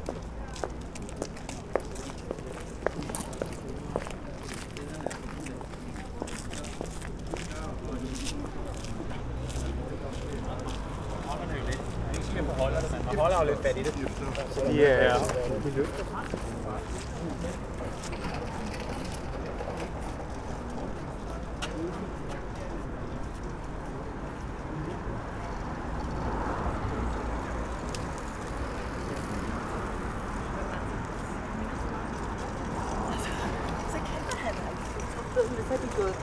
Cyclists, pedestrians and cars passing in front of recorder. Busy side street with bus lane. One can hear voice of a guide from tourist boat
Bruits de cyclistes, piétons et voitures en face de l’enregistreur. Rue passante à gauche, avec une voie de bus. On peut entendre des commentaires en provenance d'un bateau de touriste à la fin de l’enregistrement